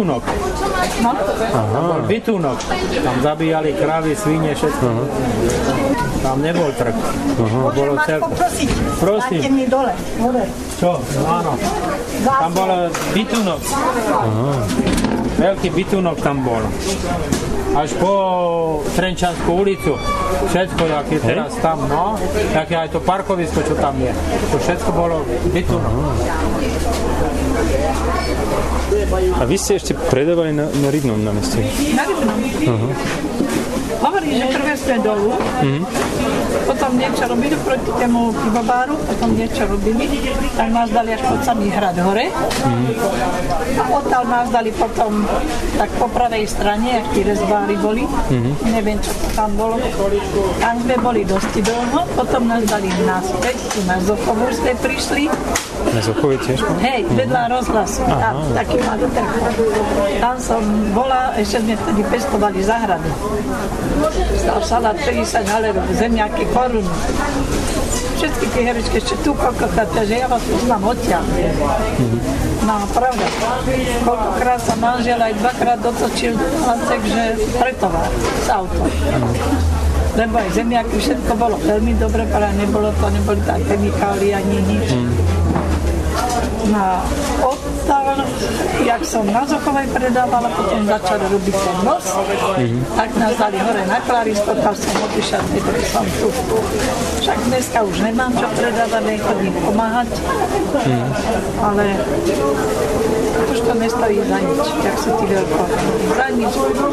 vendors telling some history about bratislavas biggest marketplaces